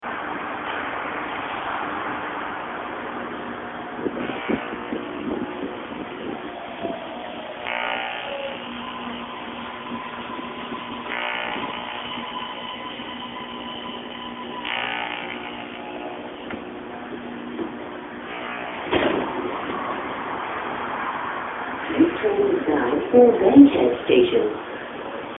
MARTA Edgewood audio failure
Recording was done on mobile phone (hence the rough quality). The metro station's PA system had a buzz for the past two weeks. However, today, the buzz turned into what sounded like a drone or a distorted string being picked on a guitar. It was quite interesting and creepy to hear this sound (as opposed to the usual muzak) emanating from the PA speakers.
GA, USA, 14 December 2010